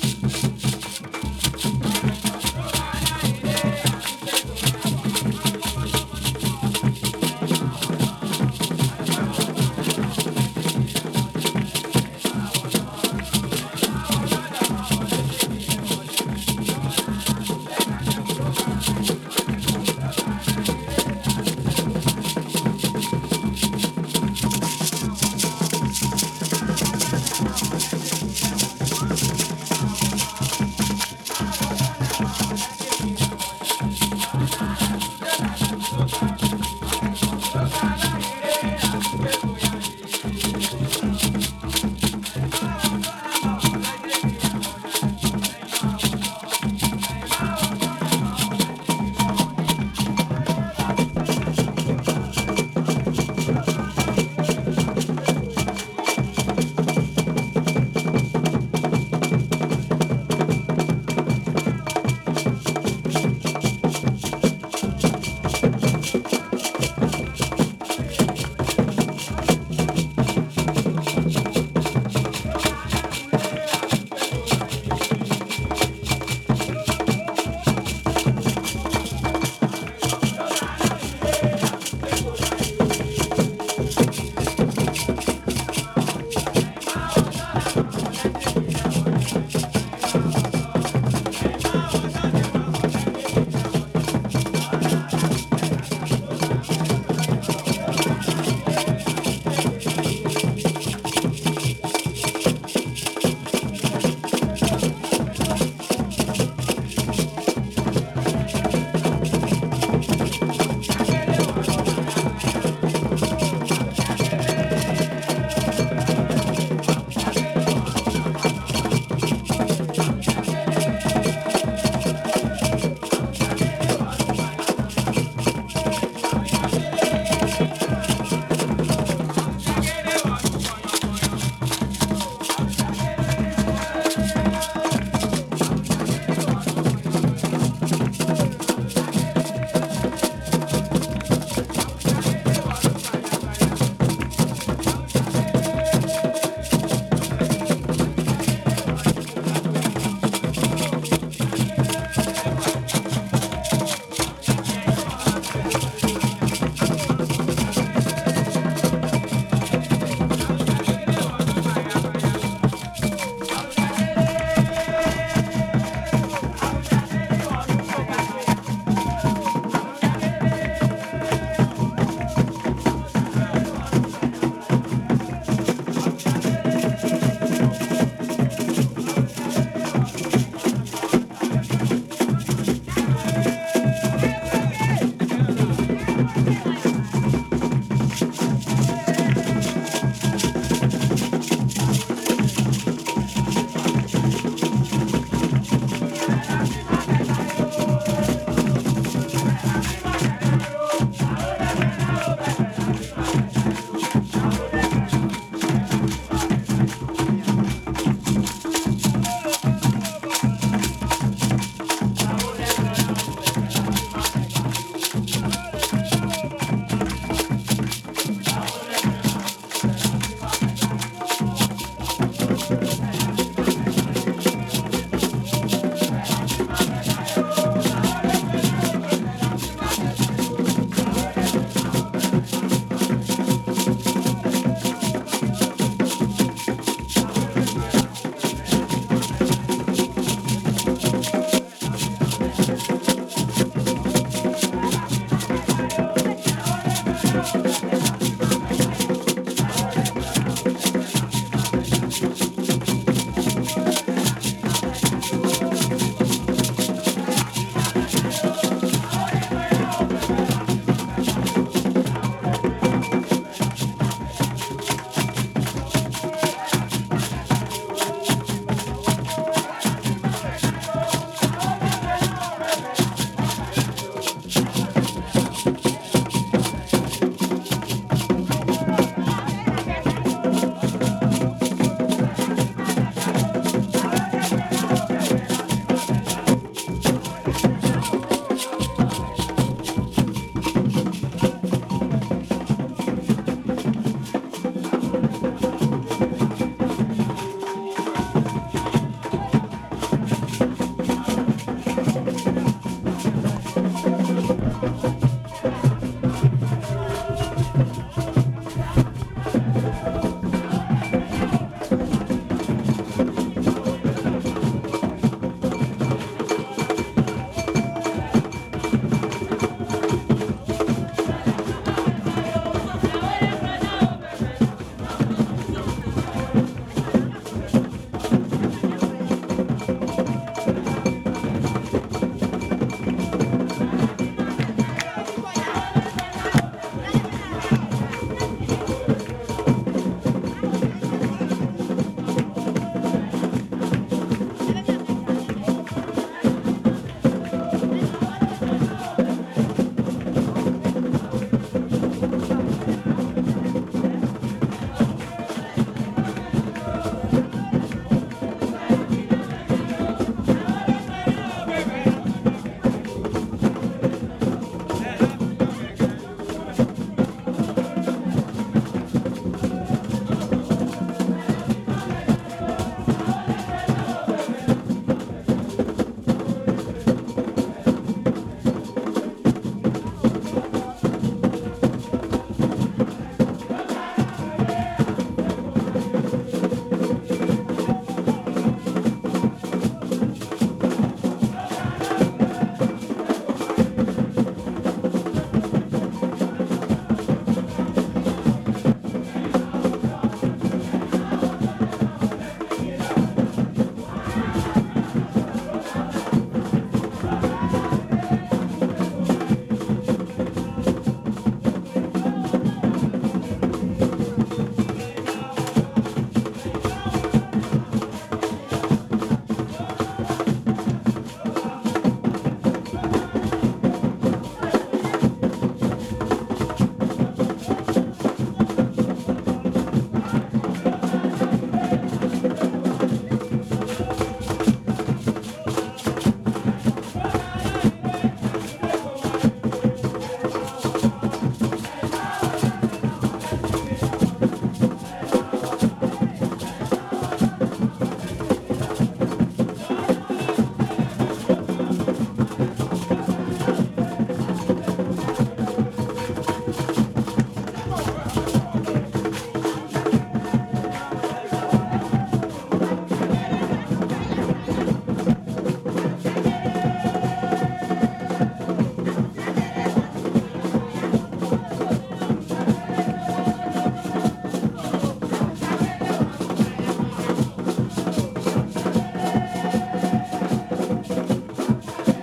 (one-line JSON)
{"title": "Kasa St, Ho, Ghana - Kekele Dance Group practising", "date": "2004-11-04 17:15:00", "description": "Kekele Dance Group practising for the big Farmers festival", "latitude": "6.60", "longitude": "0.47", "altitude": "168", "timezone": "Africa/Accra"}